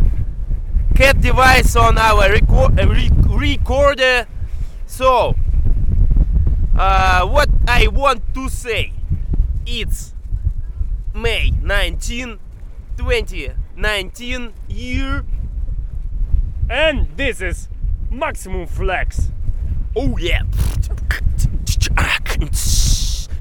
You're wellcome! First field-record on Sakhalin island. Udzhin Flow and ISAI.